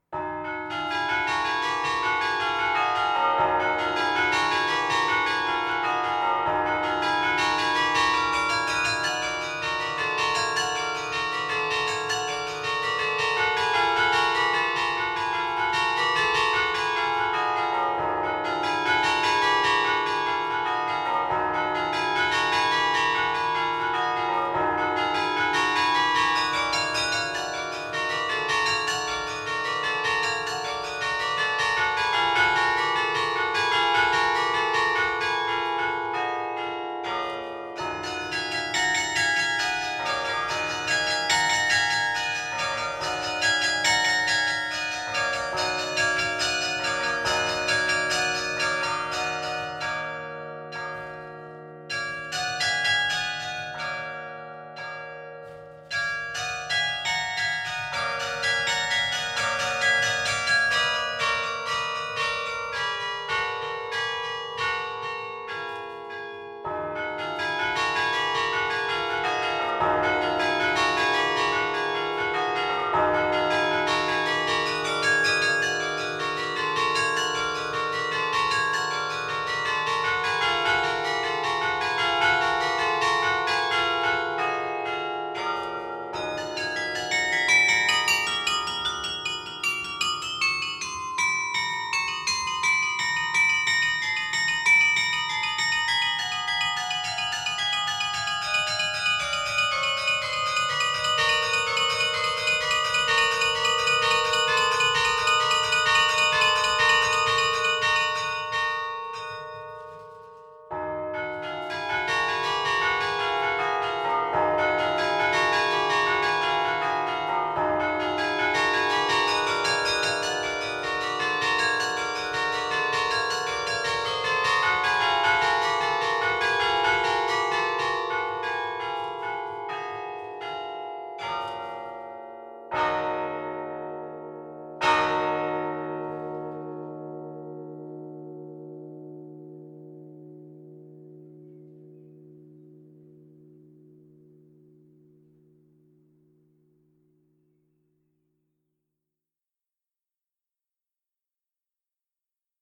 Carillon de l'abbatiale de St-Amand-les-Eaux - Abbatiale de St-Amand-les-Eaux
Abbatiale de St-Amand-les-Eaux
Maître carillonneur : Charles Dairay